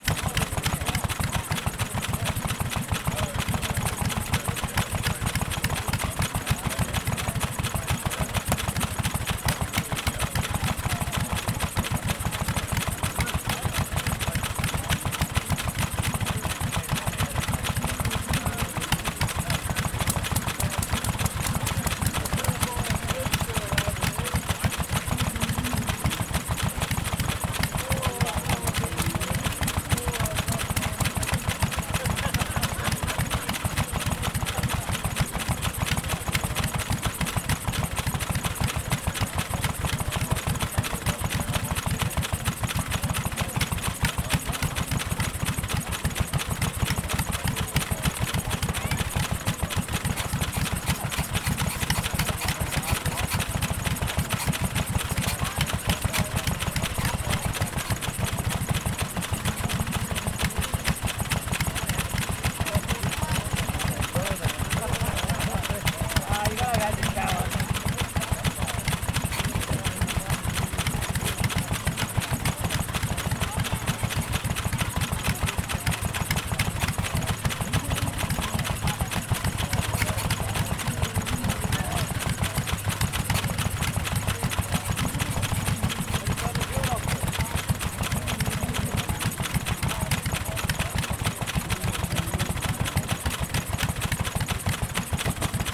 Bodalla NSW, Australia - Bodalla NSW, machine sounds at fair
Vintage portable steam and petrol engines on display at school fair.
- pumps shift water around tanks, flywheels spin, belts slap and flail
- owners wander about the machines: starting, stopping & adjusting
- near chatter of crowd & phasing sounds from buskers in distance